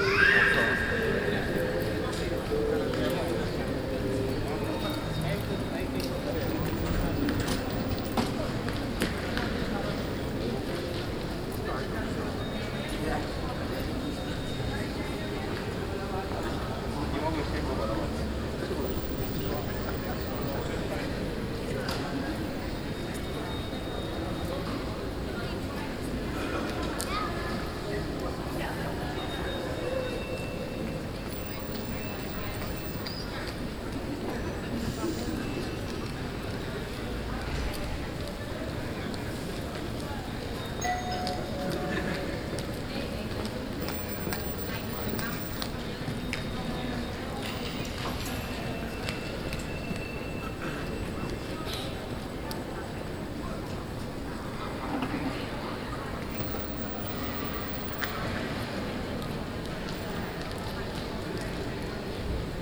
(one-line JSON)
{"title": "City Hall, Spui, Den Haag, Nederland - Atrium City Hall", "date": "2015-08-06 15:13:00", "description": "Atrium City Hall in The Hague. A pretty quiet summer afternoon.\nRecorded with a Zoom H2 with additional Sound Professionals SP-TFB-2 binaural microphones.", "latitude": "52.08", "longitude": "4.32", "altitude": "9", "timezone": "Europe/Amsterdam"}